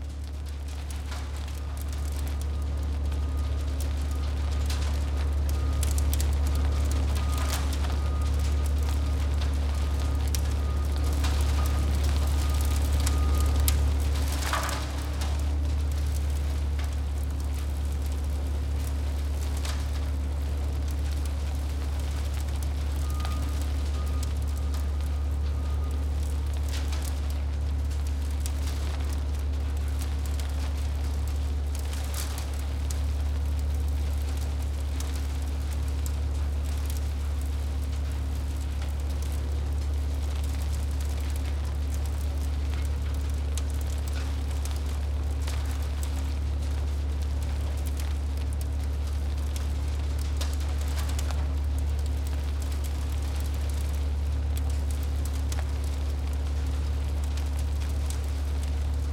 zerkleinerungsmaschine und antransport von biomaterialien - wärme ca 50 grad lufttemperatur gefühlte 100 prozent
- soundmap nrw
project: social ambiences/ listen to the people - in & outdoor nearfield recordings
robert - zapp - strasse, städtische kompostieranlage